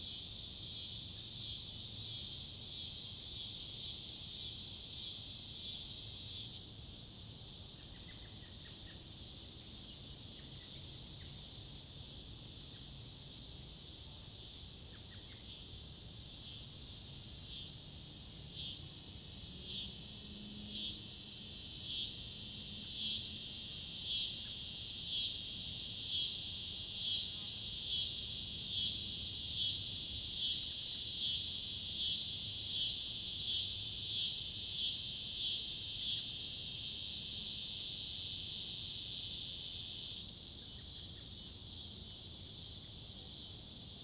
Queeny Park, Town and Country, Missouri, USA - Dogwood Trail Pond
Recording in woods near pond in the evening
Missouri, United States, 19 August 2022